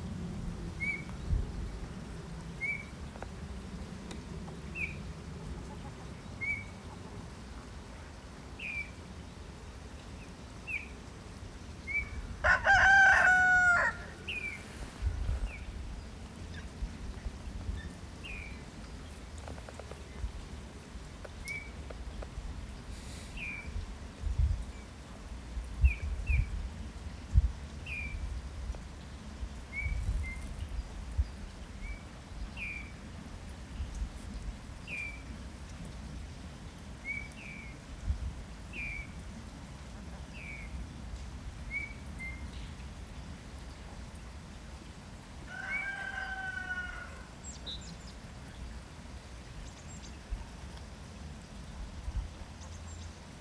Mitterleiten, Aschau im Chiemgau, Deutschland - The Rooster and His Chickens
Der Hahn und seine Hühner. Auf dem Misthaufen an einem viel zu warmen Wintertag. Jedoch lag Schnee …
Und ein Flugzeug zieht am Himmel vorüber.
Bayern, Deutschland, 22 February 2022